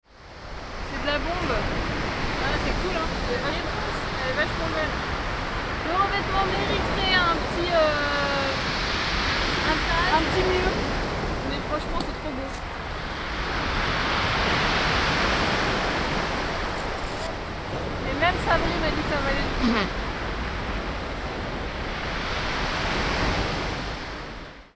Rottingdean, The City of Brighton and Hove, Royaume-Uni - BINAURAL Sea on the Undercliff Walk
BINAURAL RECORDING (have to listen with headphones!!)
Cycling and skating along the sea, beside cliffs!
Brighton, The City of Brighton and Hove, UK, 9 August 2013, 16:33